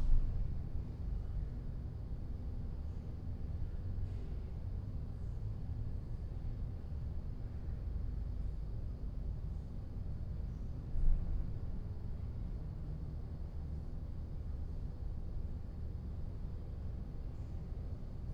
inner yard window, Piazza Cornelia Romana, Trieste, Italy - afternoon quietness
(SD702, NT1A AB)
7 September 2013, 15:05